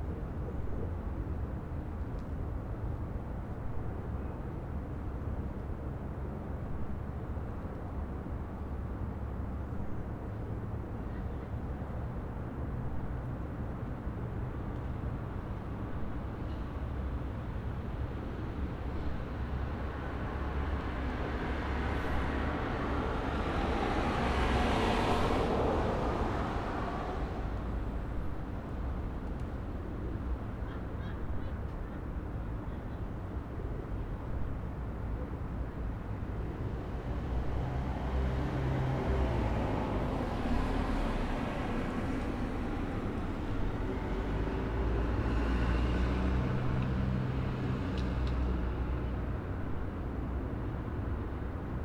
neoscenes: Argyll Street bus stop
Millers Point NSW, Australia, 12 September 2010